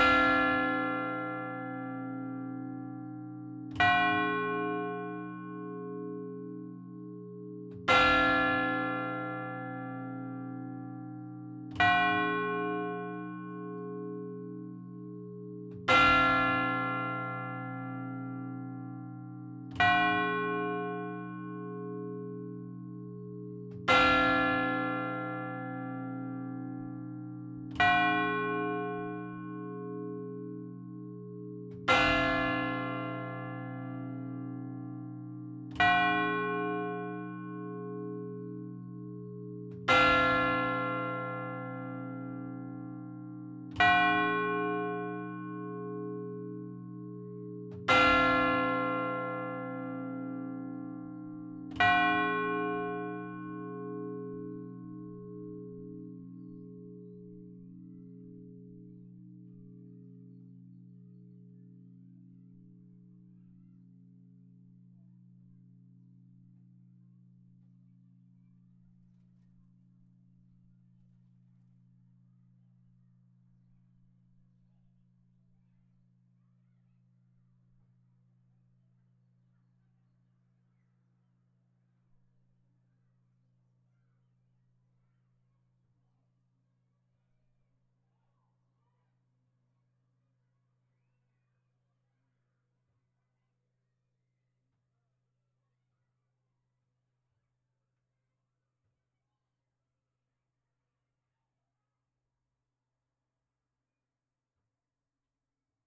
Rte de Roubaix, Lecelles, France - Lecelles - église
Lecelles (Nord)
église - Glas automatisé - Cloche grave
Hauts-de-France, France métropolitaine, France